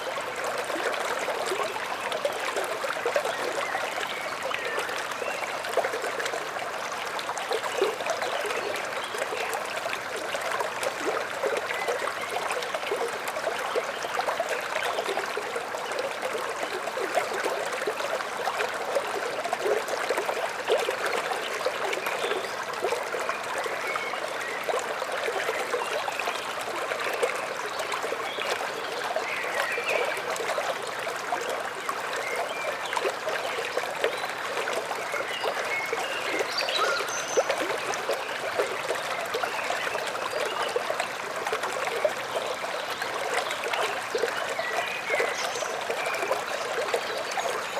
Sirutėnai, Lithuania, at streamlet
standing at the small streamlet
Utenos rajono savivaldybė, Utenos apskritis, Lietuva, 29 May, ~17:00